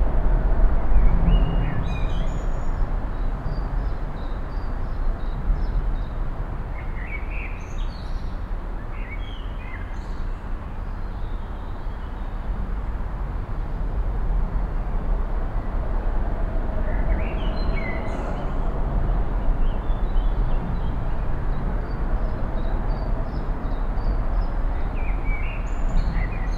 Wik, Kiel, Deutschland - Under the bridge
Directly under the bridge with lots of traffic, low frequency rumble from the maintenance chambers and gangways on the lower side of the bridge, audible expansion gaps, birds singing, wind in the trees, a jogger passing by
Binaural recording, Zoom F4 recorder, Soundman OKM II Klassik microphone with wind protection
Kiel, Germany